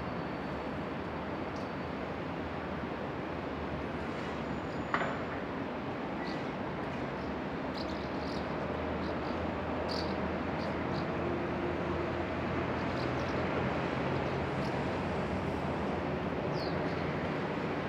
Auf dem Balkon. 23. Stockwerk.
Berlin, Germany